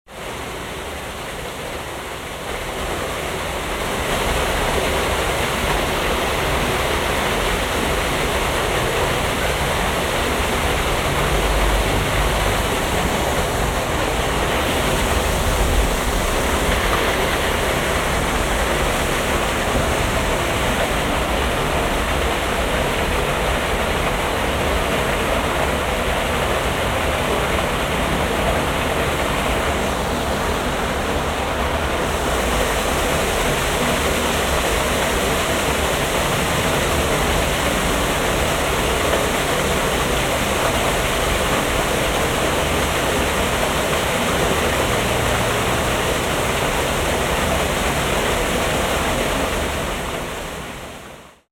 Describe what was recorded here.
ablaufendes wasser, resonierend aus kanalschacht uaf fussgängerweg, morgens, soundmap nrw: social ambiences/ listen to the people - in & outdoor nearfield recordings